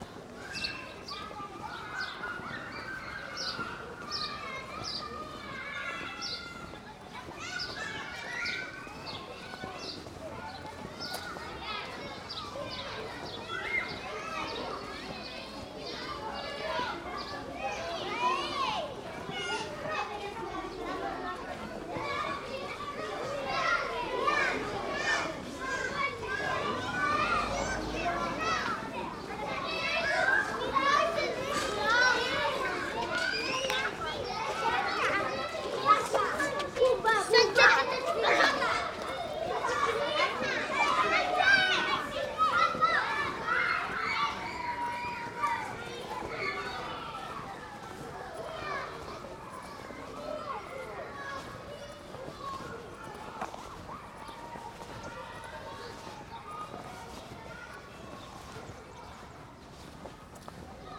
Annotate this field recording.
Children playing in the kindergarten.